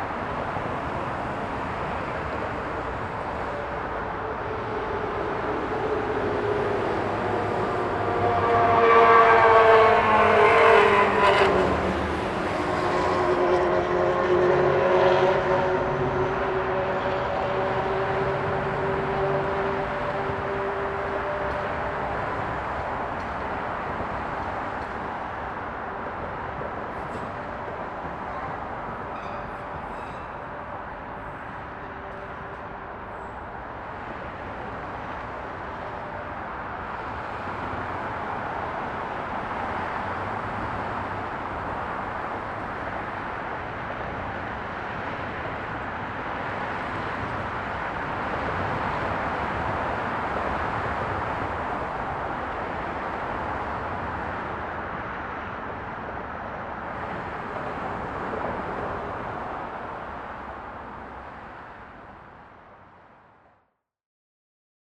Mid-Town Belvedere, Baltimore, MD, USA - Fitzgerald Garage at night
Thursday Night 8:35pm at Fitzgerald's garage
6th floor, very quiet inside the building
the adjacent highway and train station produced vast amount of sound.
Using a TASCAM-DR40.